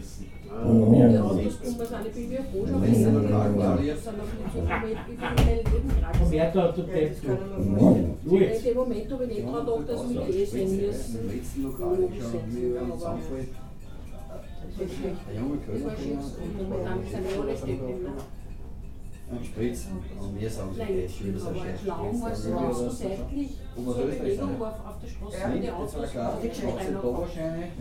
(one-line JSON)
{"title": "Alt-Urfahr, Linz, Österreich - nestroystüberl", "date": "2015-02-03 13:13:00", "description": "nestroystüberl, nestroystr. 4, 4040 linz", "latitude": "48.32", "longitude": "14.28", "altitude": "271", "timezone": "Europe/Vienna"}